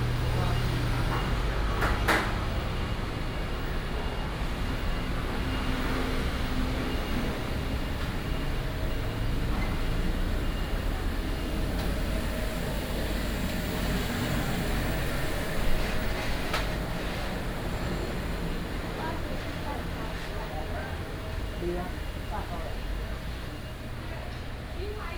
朝陽市場, Taoyuan City - Old traditional market
Walking in the Old traditional market, traffic sound